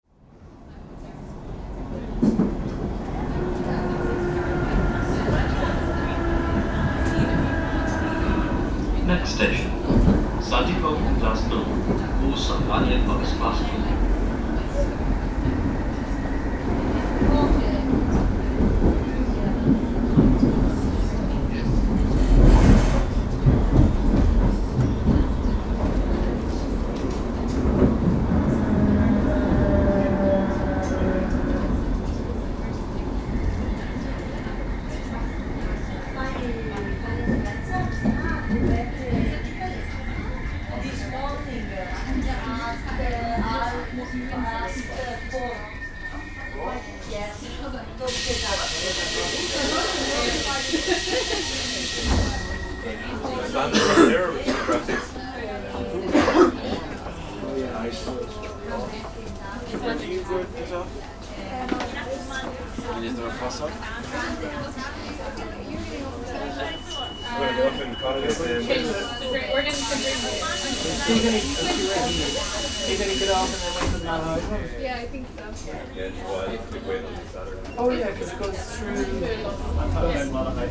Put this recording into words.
On the train near Sandycove and Glasthule station. Train stopping, doors opening and closing.